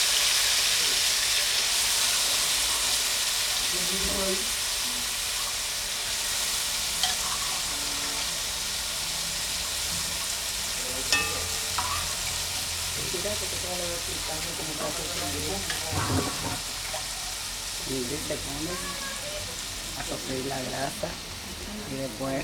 Santiago de Cuba, in the kitchen, cooking rice and beans
6 December 2003